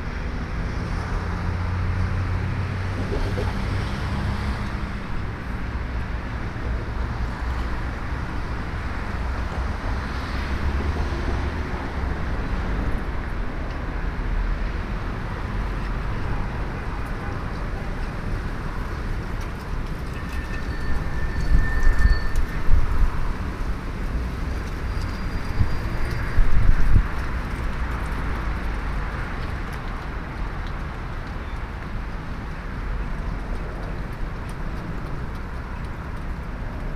{
  "title": "Cieplice, Jelenia Góra, Poland - (892) Train platform",
  "date": "2022-02-17 07:51:00",
  "description": "Binaural recording of train platform with rare wind swooshes through the platform shed.\nRecording made with Soundman OKM on Olympus LS-P4.",
  "latitude": "50.87",
  "longitude": "15.69",
  "altitude": "340",
  "timezone": "Europe/Warsaw"
}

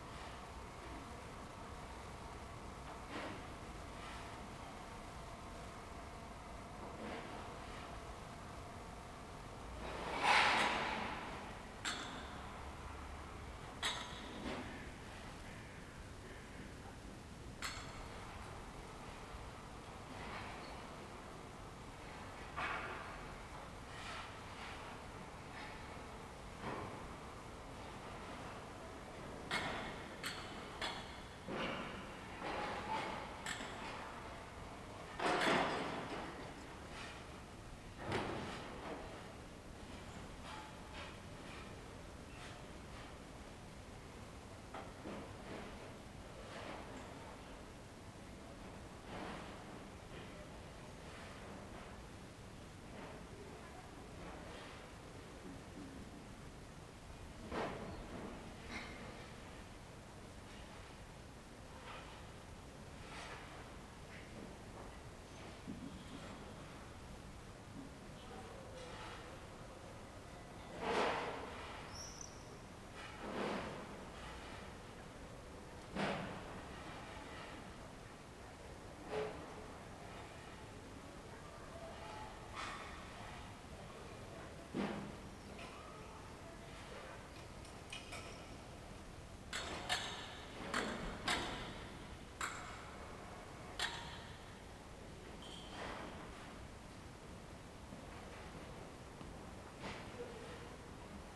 {
  "title": "Ascolto il tuo cuore, città. I listen to your heart, city. Several chapters **SCROLL DOWN FOR ALL RECORDINGS** - Afternoon with building-yard noise in the time of COVID19 Soundscape",
  "date": "2020-06-01 09:34:00",
  "description": "\"Afternoon with building-yard noise in the time of COVID19\" Soundscape\nChapter XCIV of Ascolto il tuo cuore, città. I listen to your heart, city.\nMonday, June 1st 2020. Fixed position on an internal terrace at San Salvario district Turin, eighty-three days after (but day twenty-nine of Phase II and day sixteen of Phase IIB and day ten of Phase IIC) of emergency disposition due to the epidemic of COVID19.\nStart at 9:34 a.m. end at 10:14 a.m. duration of recording 39’50”.",
  "latitude": "45.06",
  "longitude": "7.69",
  "altitude": "245",
  "timezone": "Europe/Rome"
}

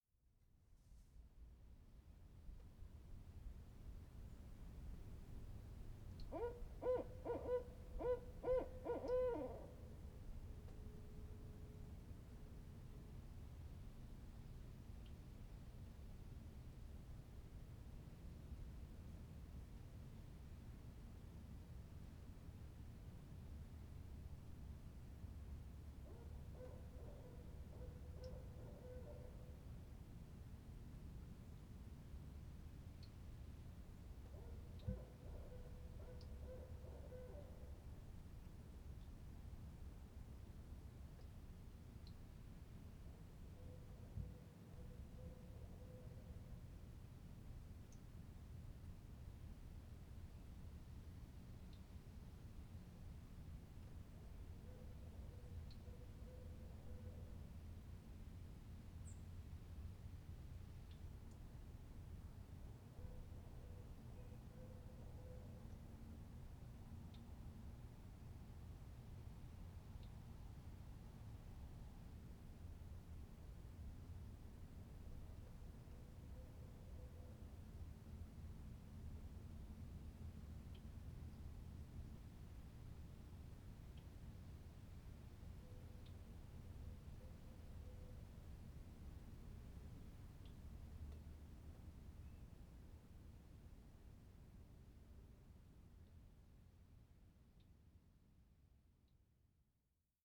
{
  "title": "Wind & Tide Playground - Owl",
  "date": "2020-09-16 05:41:00",
  "description": "Early morning owl. I truncated the pauses between the calls as he moved away, which were actually about a minute each.",
  "latitude": "47.88",
  "longitude": "-122.32",
  "altitude": "120",
  "timezone": "America/Los_Angeles"
}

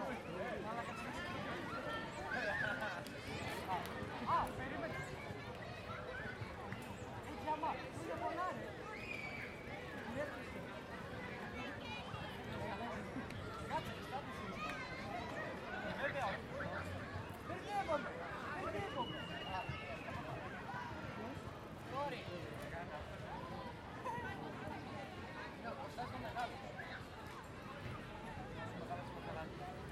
{
  "title": "Ικονίου, Λυκούργου Θρακός και, Ξάνθη, Ελλάδα - Park Megas Alexandros/ Πάρκο Μέγας Αλέξανδρος- 19:30",
  "date": "2020-05-12 19:30:00",
  "description": "Kids playing, people talking, distant, dog barking distant, car passes by.",
  "latitude": "41.14",
  "longitude": "24.89",
  "altitude": "72",
  "timezone": "Europe/Athens"
}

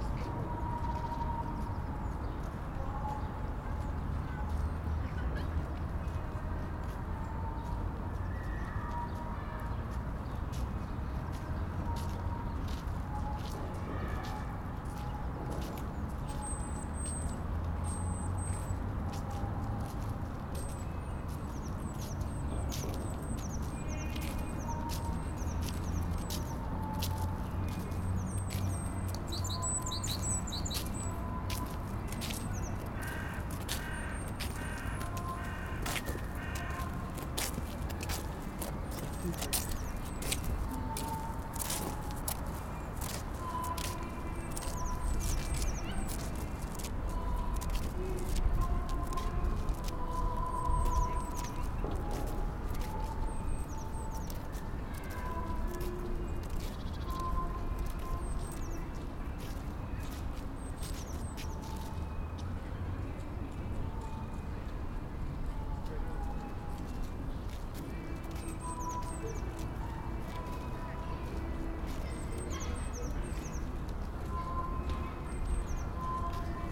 {
  "title": "Mihaylovsky garden, Saint-Petersburg, Russia - Mihaylovsky garden. Church service near Savior on Blood",
  "date": "2015-03-15 18:36:00",
  "description": "SPb Sound Map project\nRecording from SPb Sound Museum collection",
  "latitude": "59.94",
  "longitude": "30.33",
  "altitude": "15",
  "timezone": "Europe/Moscow"
}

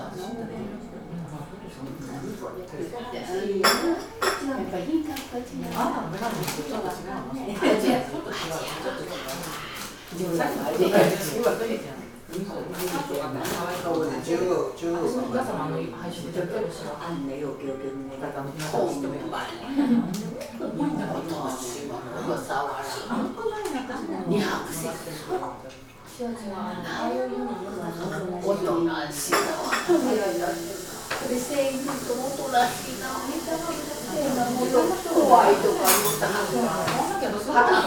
{
  "title": "philosopher's walk, Kyoto - bistro",
  "date": "2014-11-02 13:40:00",
  "description": "murmur of people, café",
  "latitude": "35.02",
  "longitude": "135.79",
  "altitude": "75",
  "timezone": "Asia/Tokyo"
}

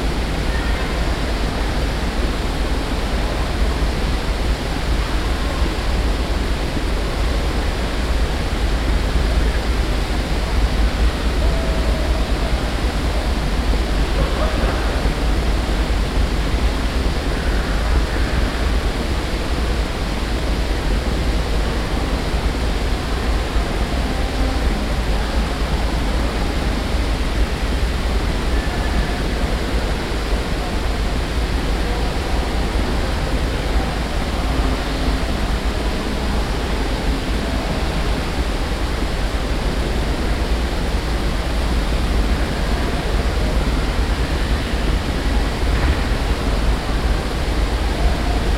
{
  "title": "Fairfax, Fair Oaks Mall, Waterfall",
  "date": "2011-11-01 18:20:00",
  "description": "USA, Virginia, Mall, Water, Fountain, Binaural",
  "latitude": "38.86",
  "longitude": "-77.36",
  "altitude": "128",
  "timezone": "America/New_York"
}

